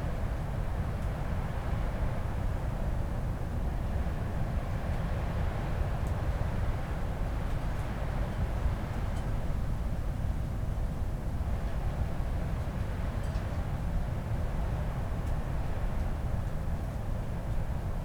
Chapel Fields, Helperthorpe, Malton, UK - inside poly tunnel ... outside approaching storm ...
inside poly tunnel ... outside approaching storm ... lavalier mics clipped to sandwich box ...
3 March 2019, 21:00